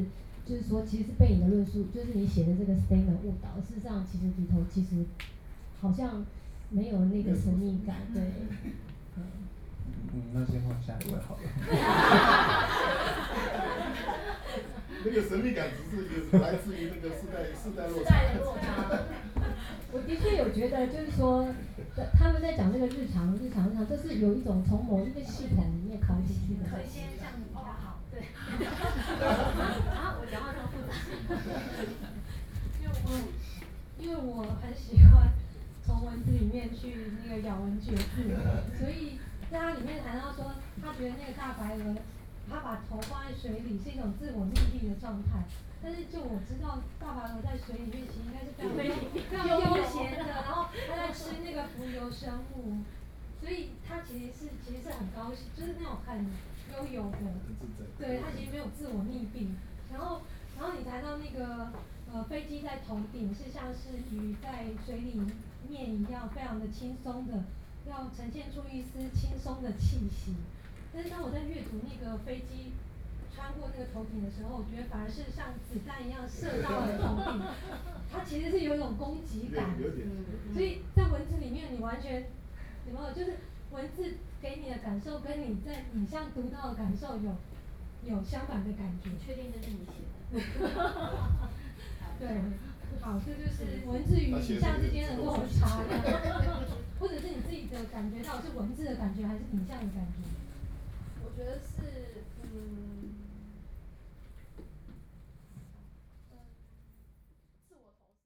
Nanhai Gallery - Young artists forum
Young artists forum, With curator and art critic and teacher Talk, Sony Pcm d50+ Soundman OKM II
台北市 (Taipei City), 中華民國, 21 July 2013